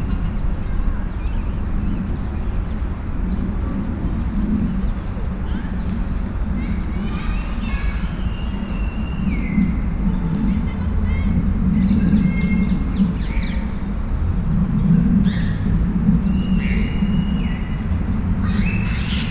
Brussels, Parc Duden
Children playing, people wandering.